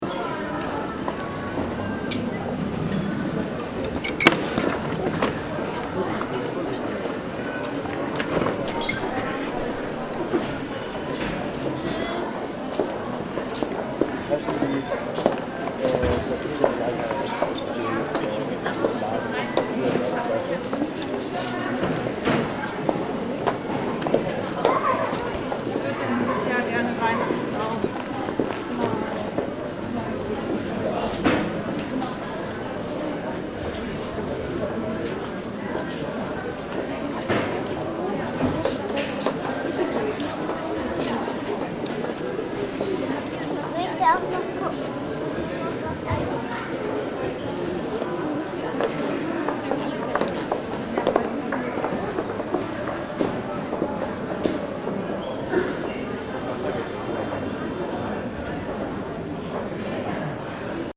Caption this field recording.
Official plans of future urban development in Hamburg aim to restructure the Große Bergstrasse in Hamburg-Altona. One aspect of the plan is the construction of a large inner city store by the IKEA corporation on the site of the former department store "Frappant", actually used as studios and music venues by artists. You find the sounds of the Ikea furniture store layered on the map of the Frappant building, next to sounds of the existing space. Offizielle Umstrukturierungspläne in Hamburg sehen vor das ehemalige Kaufhaus „Frappant“ in der Altonaer Großen Bergstrasse – seit 2006 Ateliers und Veranstaltungsräume – abzureißen und den Bau eines innerstädtischen IKEA Möbelhaus zu fördern. Es gibt eine öffentliche Debatte um diese ökonomisierende und gentrifizierende Stadtpolitik. Auf dieser Seite liegen die Sounds von IKEA Moorfleet auf der Karte der Gr. Bergstrasse neben Sounds im und um das Frappant Gebäude. Eine Überlagerung von Klangräumen.